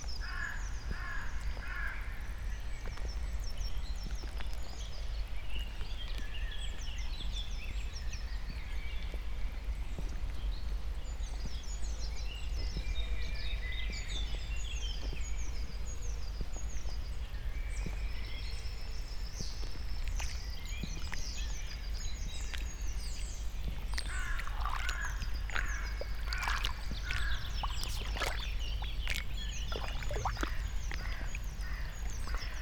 inside the pool, mariborski otok - light rain, puddles